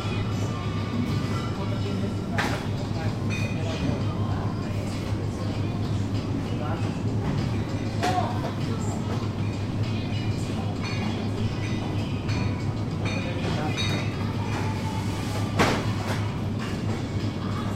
the city, the country & me: june 2, 2008
Berlin, Germany, 15 June 2008, ~00:00